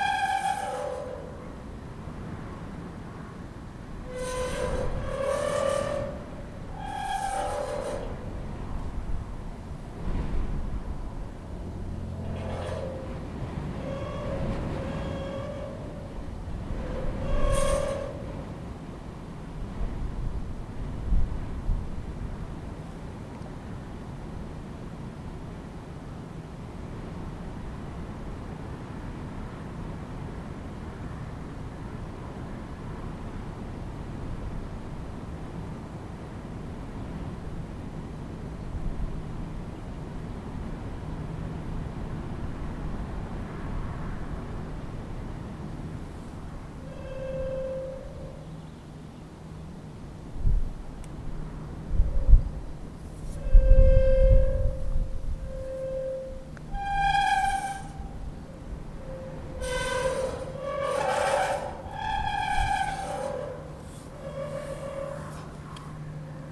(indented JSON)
{
  "title": "Arbesbach, Arbesbach, Österreich - All along the watchtower",
  "date": "2017-07-02 18:19:00",
  "description": "A metal flag that sits on an old watchtower and mourns with the wind since forever.",
  "latitude": "48.49",
  "longitude": "14.96",
  "altitude": "894",
  "timezone": "Europe/Vienna"
}